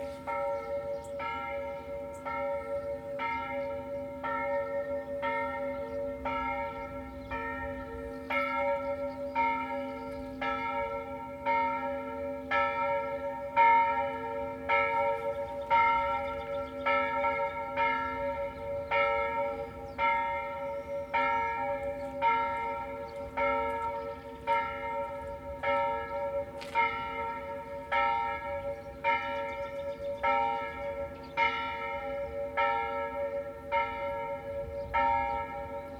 Vrbnik, island Krk, silence in a small port - nobody around
sound of a distant plane, birds, bells ringing (the church is on the right, on the top of a steep rocky hill)